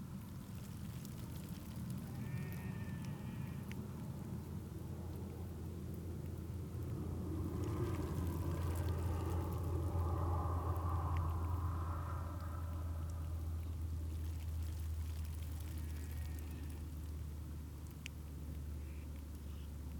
Burland Croft Trail, Trondra, Shetland Islands, UK - Seaweed, Shetland sheep, Arctic Terns
One of the things I have learnt in Shetland is that many crofters and farmers still supplement the diet that Shetland sheep enjoy on land with seaweed from the shoreline. At different points in the year either the seaweed is gathered in for the sheep, or they make their way down to the shore to eat the seaweed and though doing to glean some much-needed minerals for their diet. I have heard several accounts in the Tobar an Dualchais archives which refer to this practice, and Mary Isbister mentioned it to me too, while generously showing me all around the Burland Croft Trail. I was wondering if I might find some sounds which could describe in some way the relationship between seaweed and sheep. While exploring Tommy and Mary Isbister's land, I found that down by the shoreline, the seaweed was making exciting sounds. At each slight swell of the tide, millions of tiny, crackling-type bubbly sounds would rise up in a drift from the swirling wet leaves.
August 2013